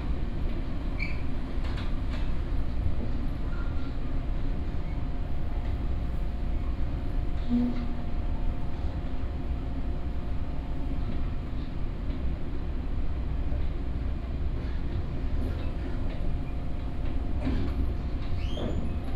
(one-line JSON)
{"title": "Yingge Dist., New Taipei City - the train", "date": "2017-09-26 12:39:00", "description": "In the train compartment, The passage between the carriage and the carriage, Binaural recordings, Sony PCM D100+ Soundman OKM II", "latitude": "24.96", "longitude": "121.34", "altitude": "91", "timezone": "Asia/Taipei"}